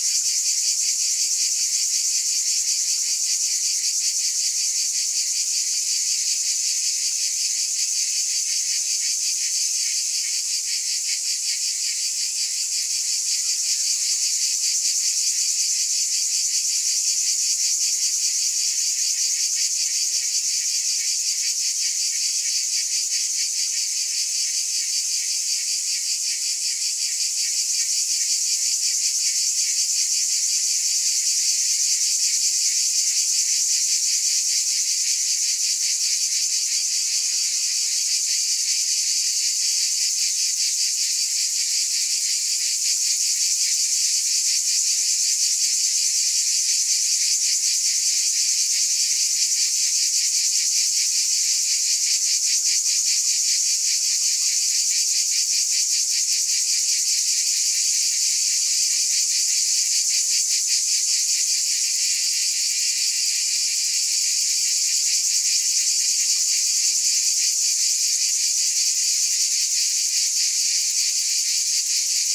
Sound recording of cicada singing during the summer in South of France (Minervois).
Recorded by a setup ORTF with 2 Schoeps CCM4
On a Sound Devices Mixpre 6 recorder
Occitanie, France métropolitaine, France, 22 July 2020, 15:00